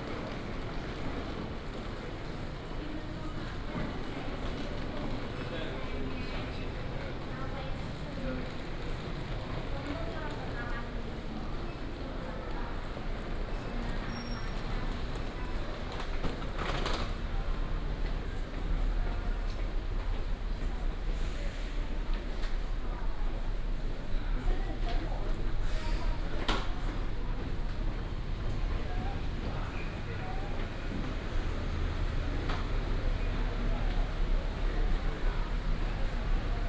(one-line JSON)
{"title": "民雄火車站, Chiayi County - Walking at the station", "date": "2018-02-15 11:01:00", "description": "Walking at the station, lunar New Year, From the station platform through the hall to the exit direction\nBinaural recordings, Sony PCM D100+ Soundman OKM II", "latitude": "23.56", "longitude": "120.43", "altitude": "30", "timezone": "Asia/Taipei"}